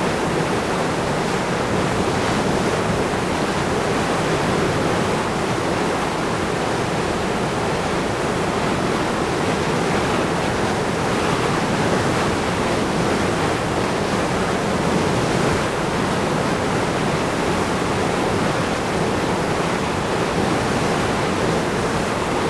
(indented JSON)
{
  "title": "Lithuania, Uzpaliai, dam at small water power station",
  "date": "2011-04-10 18:10:00",
  "latitude": "55.65",
  "longitude": "25.58",
  "altitude": "88",
  "timezone": "Europe/Vilnius"
}